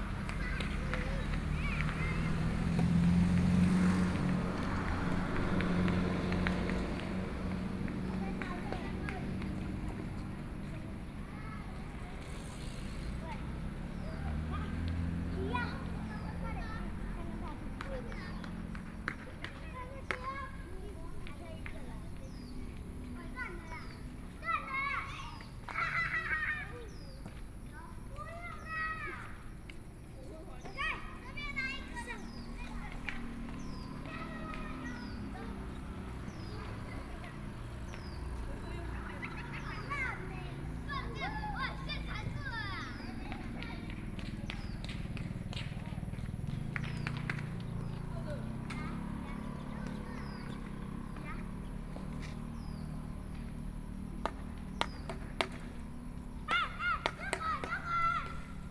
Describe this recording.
In the square, in front of the temple, Small village, Traffic Sound, A group of children playing games